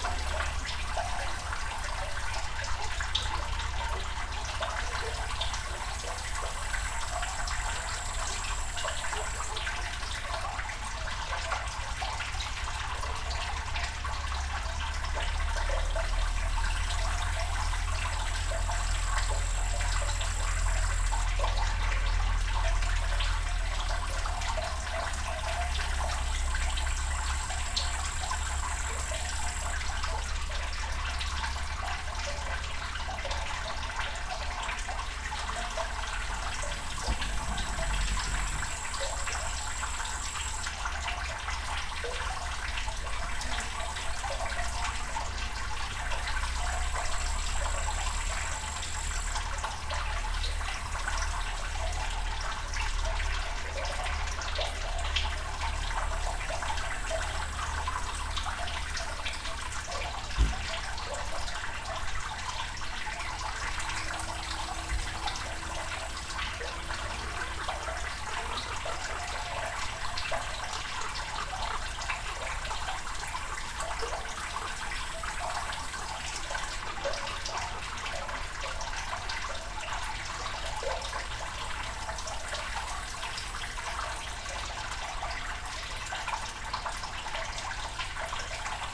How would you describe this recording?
soundscape from the edge of old soviet dam